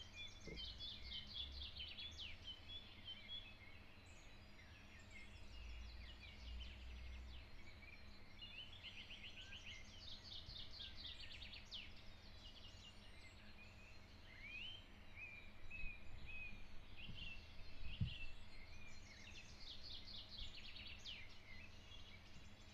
Early in the morning birds are singing in the village of Ribaritsa. Recorded with a Zoom H6 with the X/Z microphone.
Ловеч, Бългaрия, 24 May 2021